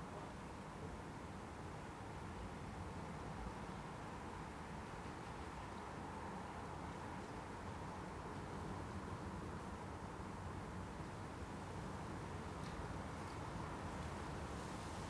In the backyard on an early spring morning. The cold lush wind and the sound of seagulls.
soundmap international:
social ambiences, topographic field recordings
South East England, England, United Kingdom